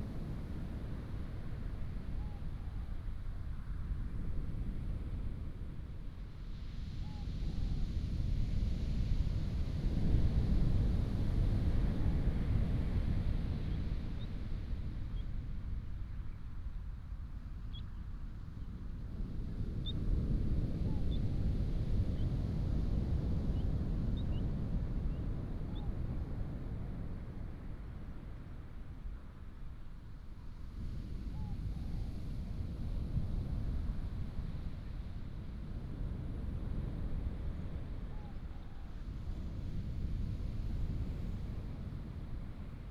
太麻里海岸, Taitung County - at the seaside
at the seaside, Bird cry, Sound of the waves, Beach, traffic sound
Taimali Township, Taitung County, Taiwan, 3 April 2018